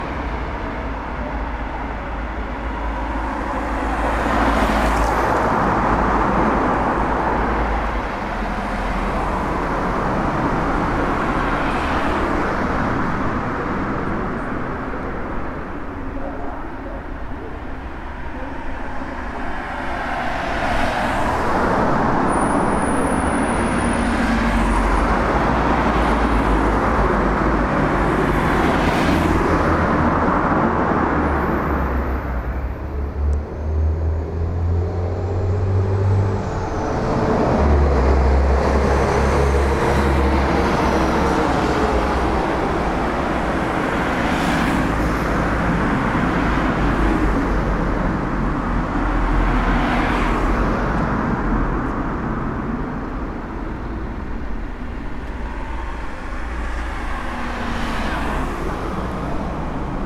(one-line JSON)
{"title": "Meljska cesta, Maribor, Slovenia - corners for one minute", "date": "2012-08-20 18:59:00", "description": "one minute for this corner: Meljska cesta", "latitude": "46.56", "longitude": "15.66", "altitude": "269", "timezone": "Europe/Ljubljana"}